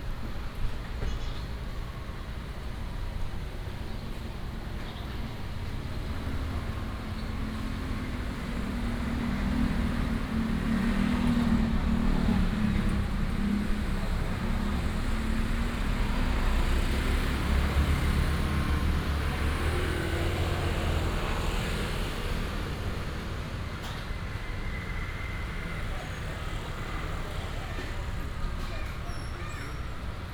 萬壽路二段, Wandan Township - Traditional market entrance
Traditional market entrance, Hot weather, traffic sound, Beside the store where lunch is sold
Binaural recordings, Sony PCM D100+ Soundman OKM II